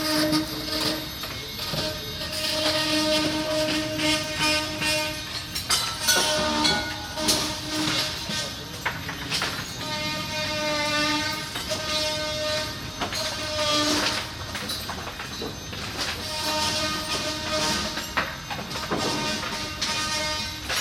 Oosterparkbuurt, Amsterdam, Nederland - Restoration works on a old school.
Restauratiewerkzaamheden/restoration work 3e H.B.S. Mauritskade (Amsterdam, July 22nd 2013) - binaural recording.
Noord-Holland, Nederland, European Union, 22 July